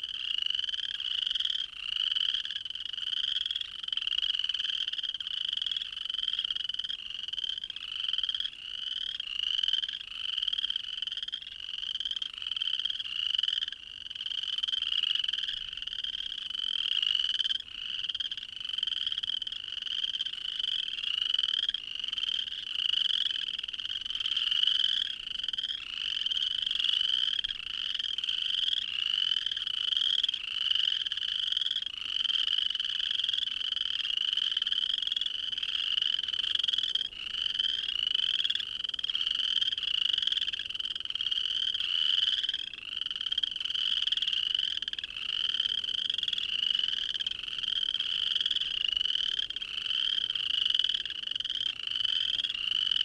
frog pond near old coal mine, Rosedale Alberta
loud chorus of chirping frogs in a pond below the old coal mine
AB, Canada, 20 April 2010